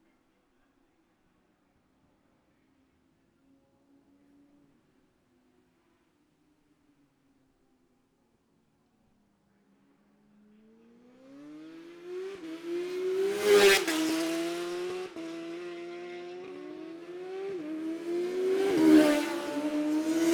Jacksons Ln, Scarborough, UK - Gold Cup 2020 ...
Gold Cup 2020 ... classic superbikes practice ... Memorial Out ... dpa s bag Mixpre3